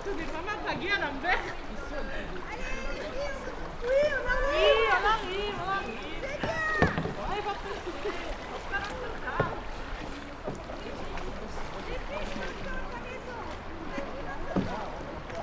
Pont de la Côte de Clermont, Côte de Clermont, Clermont-le-Fort, France - Pont de la Côte
river, bird, walke, r water, kayaker
Occitanie, France métropolitaine, France, 25 September 2022